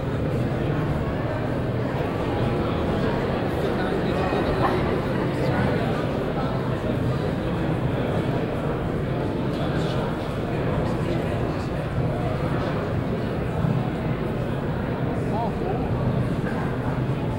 basel, dreispitz, shift festival, ausstellungshalle
soundmap international
social ambiences/ listen to the people - in & outdoor nearfield recordings